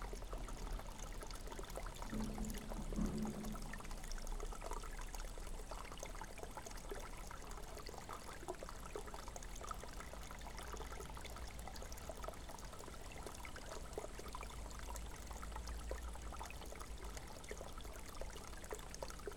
holy spring, Uzpaliai, Lithuania
so called "holy spring" with "healing" water